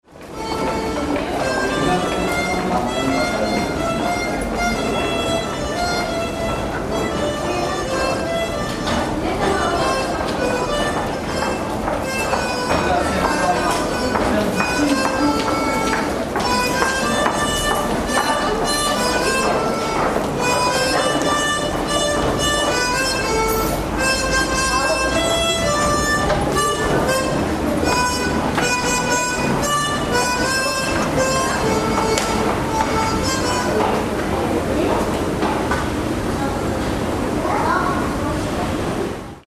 Levent metro station, a week of transit, monday morning
What makes the city the city?
Diversity, yes, but as well, repetition. The same ways everyday, the same metro station, every day, once in the mornings, once in the afternoon. Transit through other peoples lives, a brief piercing through the diversity of faces, forms and sounds, bundled in the very same place. In the end, repetition weighs more than diversity.
Büyükdere Cd, Istanbul Province/Istanbul, Turkey, 27 September 2010, ~10:00